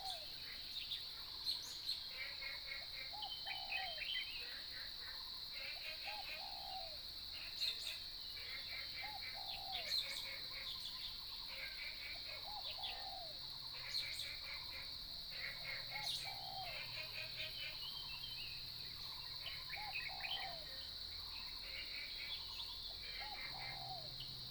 Bird calls, Frogs sound, Early morning, Cicadas cry, Distance aircraft flying through
Nantou County, Taiwan, June 2015